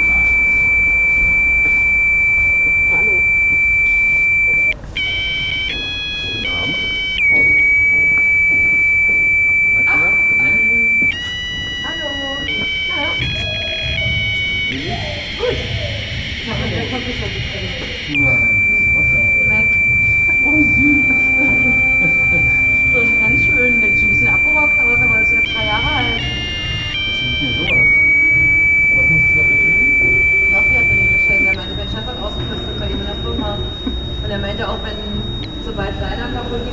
Salon Petra - happy birthday, almost
aufrey, die plastikblume des schreckens, leidet an stimmverlust. happy birthday knapp über der schwelle des zivilisationsrauschens
Berlin, Germany